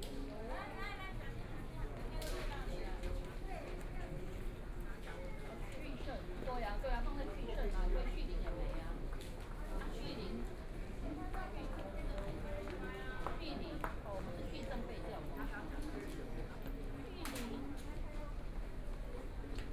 Walking through the temple inside, Binaural recordings, Zoom H4n+ Soundman OKM II